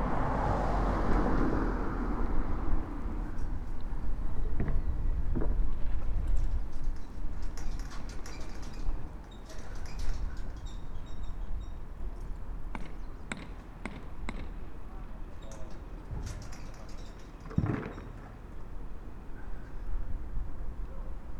{"title": "Lithuania, Vilnius, soundscape at the bastion", "date": "2012-09-12 12:45:00", "description": "natural musique concrete piece: a mid of a day, passengers, machines, workers...", "latitude": "54.68", "longitude": "25.29", "altitude": "128", "timezone": "Europe/Vilnius"}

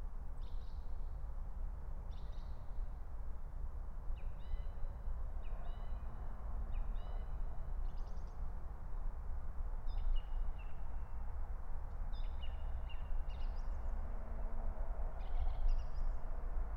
{"title": "Berlin, Alt-Friedrichsfelde, Dreiecksee - train junction, early morning pond ambience, Song thrush", "date": "2022-03-22 05:00:00", "description": "05:00 Berlin, Alt-Friedrichsfelde, Dreiecksee - train junction, pond ambience", "latitude": "52.51", "longitude": "13.54", "altitude": "45", "timezone": "Europe/Berlin"}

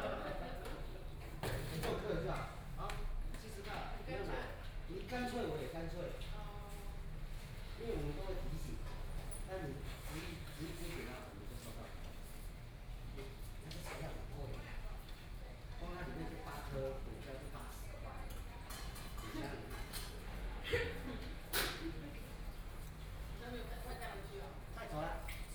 {"title": "Guangming Rd., Taitung City - In the restaurant", "date": "2014-01-15 18:18:00", "description": "In the restaurant, Binaural recordings, Zoom H4n+ Soundman OKM II", "latitude": "22.76", "longitude": "121.15", "timezone": "Asia/Taipei"}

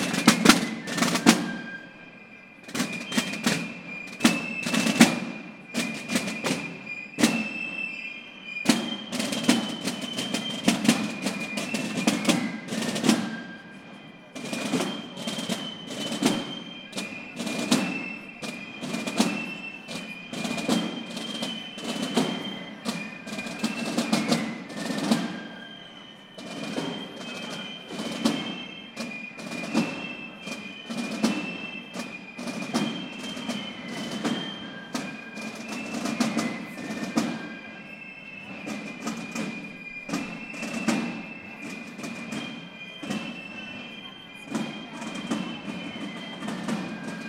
{"title": "Freie Str., Basel, Schweiz - Morgestraich", "date": "2018-02-19 04:00:00", "description": "Listen to the beginning of the Basler Fasnacht when at exactly 4.00 am all the street lights go out and the drummers and pipe players start marching.\nZoom H6, MS Microphone", "latitude": "47.56", "longitude": "7.59", "altitude": "264", "timezone": "Europe/Zurich"}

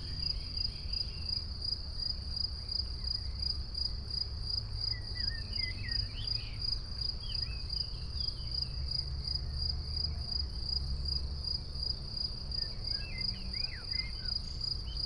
{"title": "under the trees, piramida - while waiting for available stream to the field radio aporee", "date": "2014-06-11 20:20:00", "latitude": "46.57", "longitude": "15.65", "altitude": "373", "timezone": "Europe/Ljubljana"}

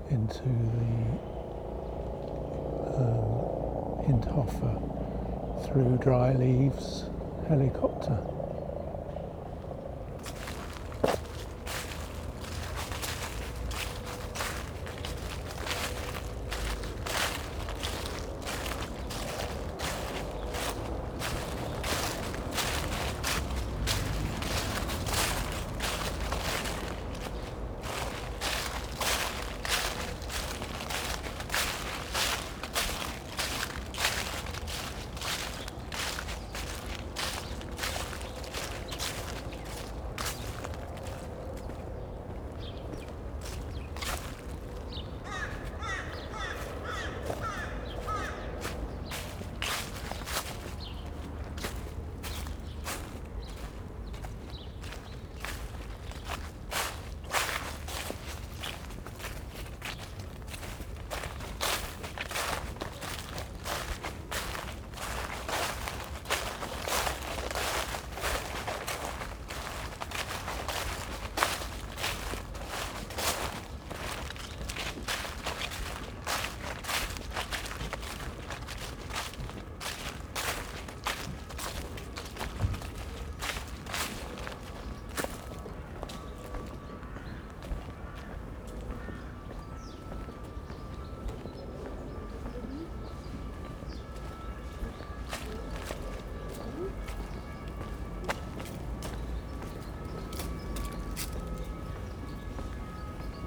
{"title": "Stallschreiberstraße, Berlin, Germany - Walking through autumn leaves with helicopter and sirens", "date": "2020-11-11 15:22:00", "description": "The recording is a walk that starts at the location on the map but finishes 350m away amongst the buildings the other side of Alexandrinenstrasse. The path is covered with dry leaves of different colours and crosses one road. A helicopter flies over and sirens approach and then stop abruptly. I couldn't see the incident and don't know what was happening. Crows, a small flock of chattering sparrows and pigeons in flight are heard, particularly at the end.", "latitude": "52.51", "longitude": "13.41", "altitude": "40", "timezone": "Europe/Berlin"}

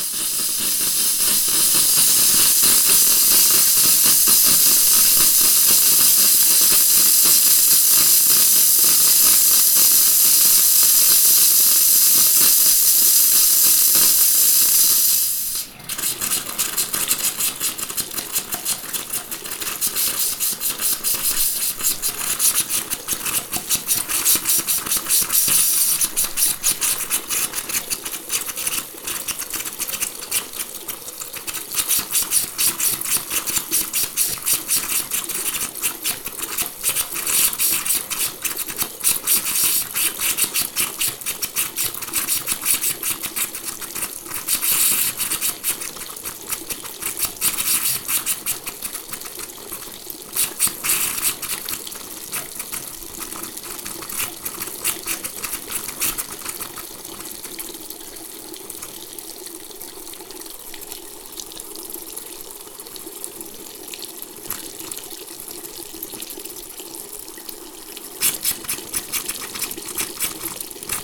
{"title": "poznan, windy hill district, bathroom - hissing faucet - water back", "date": "2012-04-27 13:01:00", "description": "the pipe if fixed, water is flowing again but the faucet is still grumpy, won't let the water flow, violently hisses with air", "latitude": "52.44", "longitude": "16.94", "altitude": "92", "timezone": "Europe/Warsaw"}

